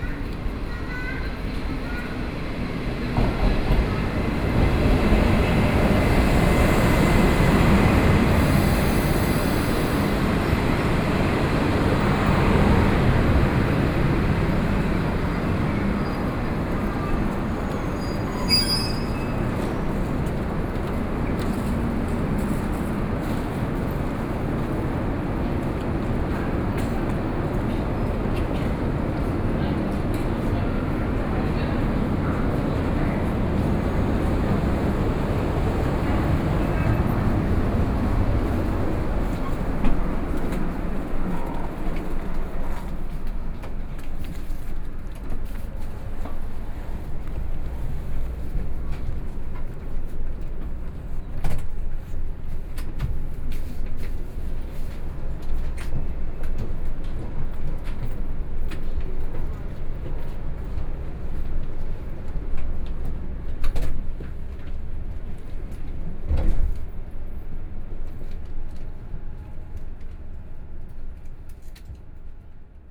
Enter the hall from the station to the station platform, Train arrived, Zoom H4n+ Soundman OKM II